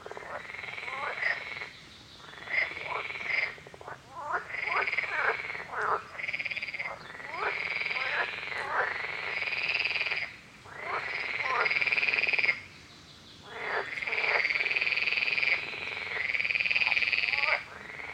Lake Luknas, Lithuania, frogs chorus